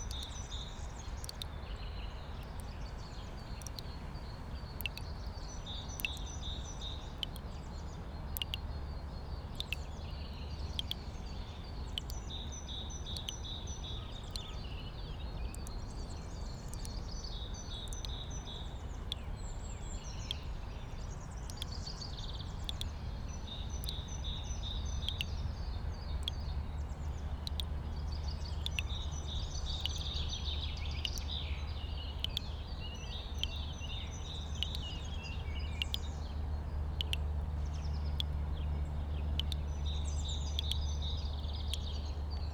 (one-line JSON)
{"title": "Friedhof Columbiadamm, Berlin, Deutschland - cemetery, spring ambience, water bassin, drops", "date": "2019-04-19 09:15:00", "description": "water bassin, dripping tap, cemetery Friedhof Columbiadamm, spring ambience\n(Sony PCM D50, Primo EM172)", "latitude": "52.48", "longitude": "13.41", "altitude": "50", "timezone": "Europe/Berlin"}